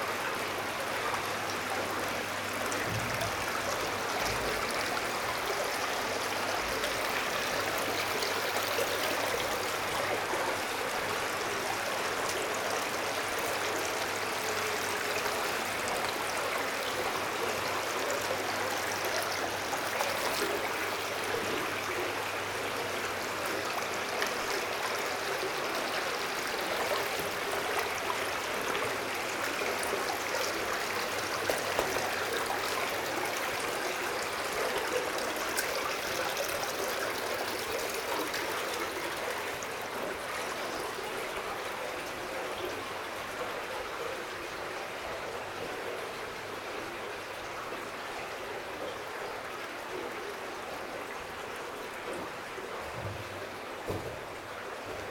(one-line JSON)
{"title": "Differdange, Luxembourg - Underground mine", "date": "2015-11-22 11:30:00", "description": "A deep underground mine ambience, walking in water, mud and abandoned tunnels.", "latitude": "49.52", "longitude": "5.86", "altitude": "405", "timezone": "Europe/Luxembourg"}